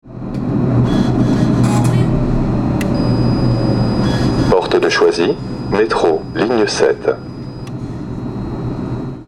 RadioFreeRobots T3 Porte de Choisy
Paris, France